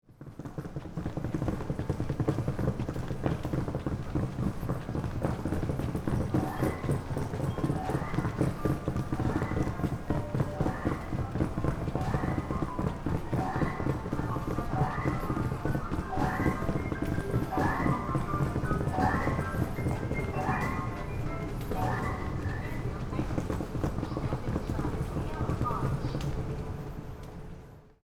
Formosa Boulevard Station, Kaohsiung City - Hand luggage
Hand luggage, Frog broadcasting, Sony PCM D50
高雄市 (Kaohsiung City), 中華民國, 5 April 2012, 23:21